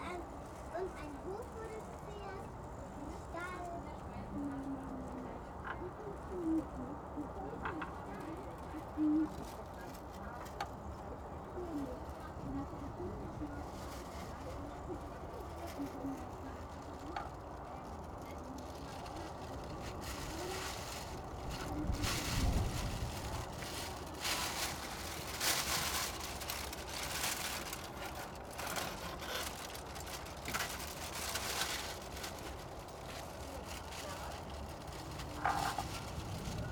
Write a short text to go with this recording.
urban gardening area on the former Tempelhof airfield. microphone in a wooden box, squeaking door, (PCM D50)